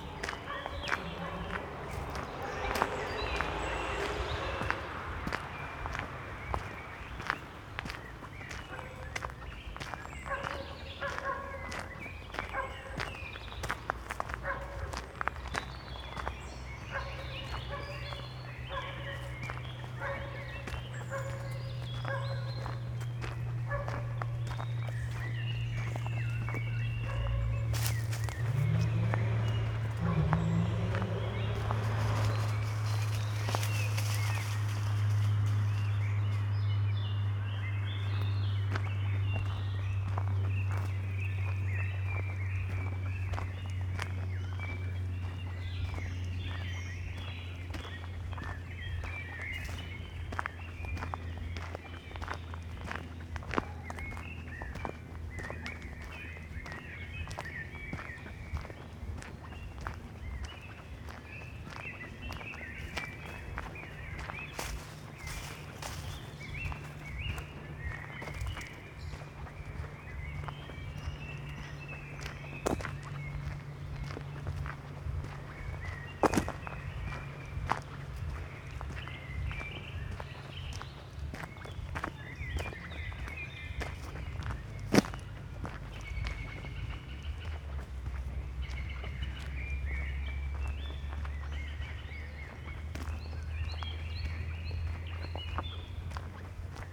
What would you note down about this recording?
Summer evening, wlking in the forest near village, ambience, (Sony PCM D50, Primo EM172)